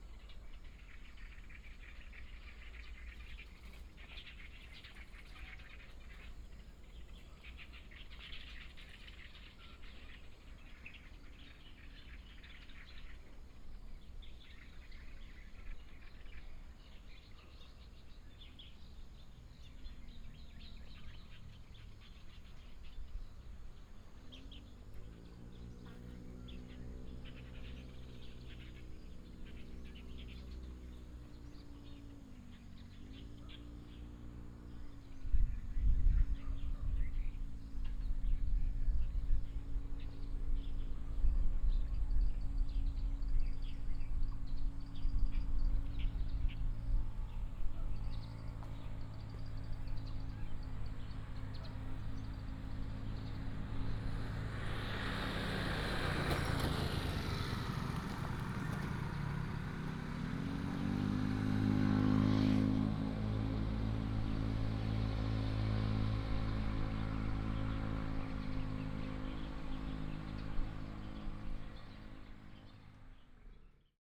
大埤池產業道路, Dawu Township - Bird and Dog
Mountain Settlement, Bird call, Traffic sound, Dog barking
Binaural recordings, Sony PCM D100+ Soundman OKM II
Taitung County, Taiwan